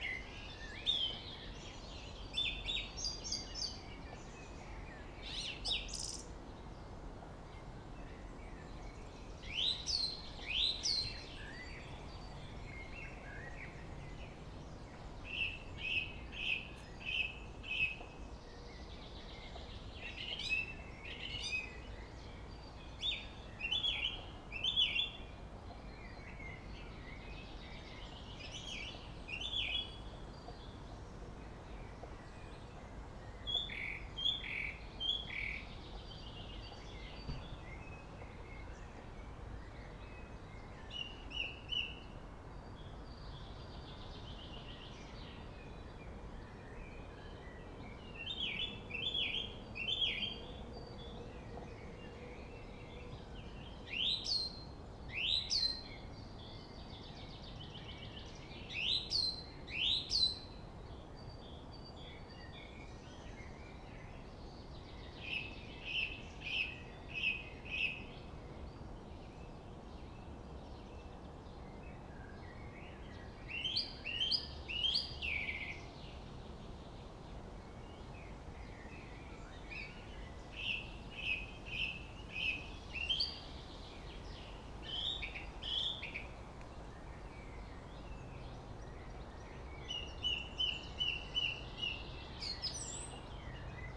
Friedhof Columbiadamm, Berlin - Song thrush / Singdrossel
Friedhof Columbiadamm (Neuer Garnisonsfriedhof), Song thrush (Singdrossel) singing, distant city / traffic noise
(Sony PCM D50)